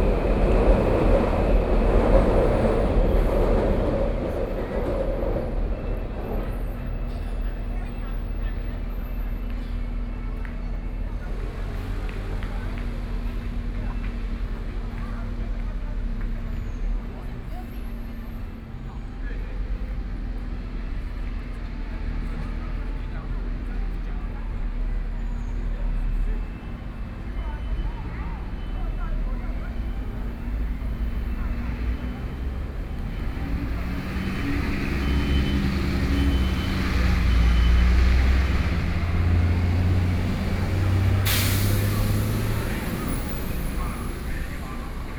中山區圓山里, Taipei City - MRT train sounds
MRT train sounds, Aircraft flying through, Traffic Sound